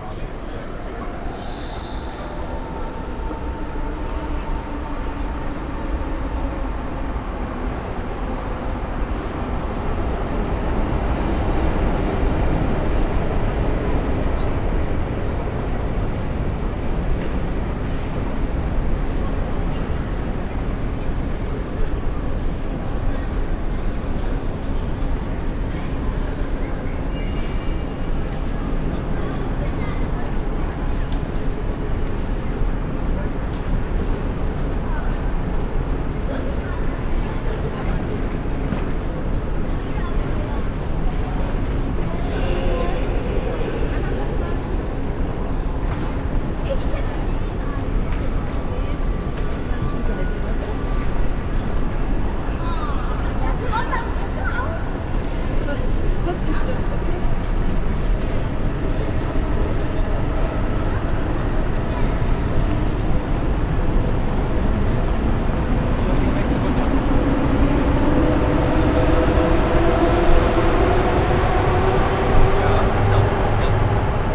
berlin main station, hall - berlin, main station, hall
soundmap d: social ambiences/ listen to the people - in & outdoor nearfield recordings
Berlin, Germany, 25 May 2009, 10:28